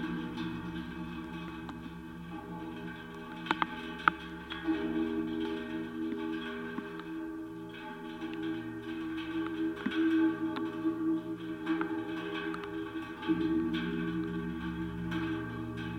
Maintenon, France - Barrier
Playing with a new metallic barrier surrounding the college school. I noticed these huge steel bars would be perfect to constitude a gigantic semantron. So I tried different parts. Recorded with a contact microphone sticked to the bars.